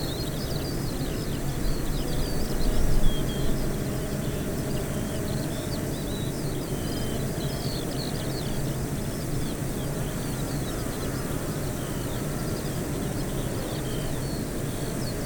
Green Ln, Malton, UK - bee hives ...
bee hives ... eight bee hives in pairs ... dpa 4060 to Zoom F6 ... mics clipped to bag ... bird song ... calls skylark ... corn bunting ...
Yorkshire and the Humber, England, United Kingdom, 25 June 2020, 05:45